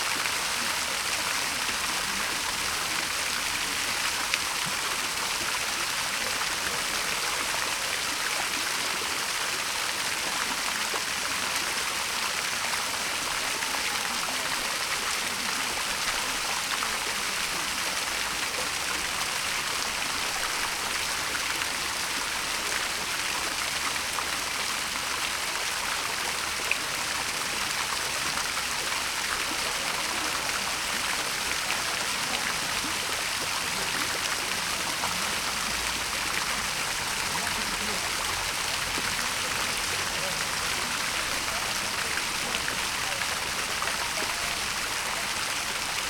Fontaine Place de la réunion - Paris
Mise en service de la fontaine - octobre 2010
Paul-Eugène Lequeux (architecte) 1858
Albert-Ernest Carrier-Belleuse (sculpteur)
Cataloguée dans "les fontaines disparues de Paris"
Paris, France, 10 October 2010, ~20:00